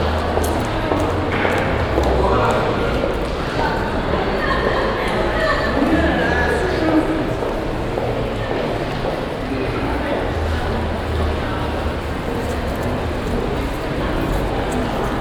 Altstadt, Bremen, Deutschland - bremen, katharinenklosterhof, shopping mall
Inside the glass roofed shopping center. The sound of steps passing by on the solid stone pavement.
soundmap d - social ambiences and topographic field recordings